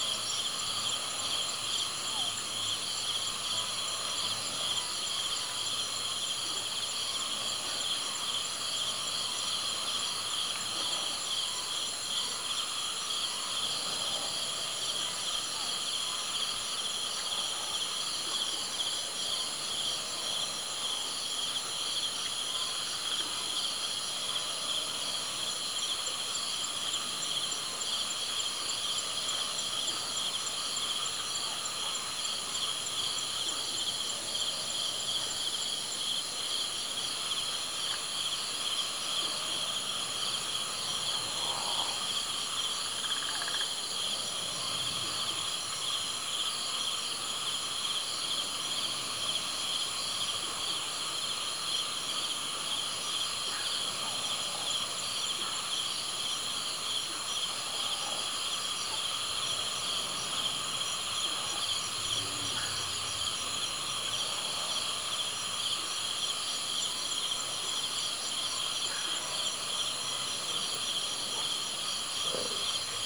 Wan Tsai South Campsite at midnight, Hong Kong, Sai Kung, 西貢 - Wan Tsai South Campsite at midnight
The campsite is located on Wan Tsai Peninsula in the Sai Kung West Country Park (Wan Tsai Extension), next to the Long Harbour (Tai Tan Hoi). You can feel the birds and insect orchestra at 4 a.m. alongside with some snoring of campers in the recording.
營地位於西頁西郊野公園灣仔擴建部分內的灣仔半島，鄰近大灘海。你可以聽到深夜四時的昆蟲雀鳥交響樂，加入一些營友的鼻鼾聲。
#Night, #Cricket, #Campsite, #Snoring, #Bird
2 July, 04:00